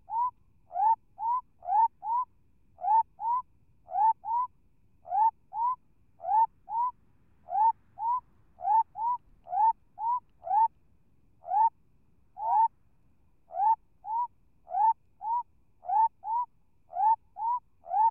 Anchorage Ln, Kalbarri WA, Australien - Frogs after sunset
Frogs calling from burrows in a marshy area. Recorded with a Sound Devices 702 field recorder and a modified Crown - SASS setup incorporating two Sennheiser mkh 20 microphones.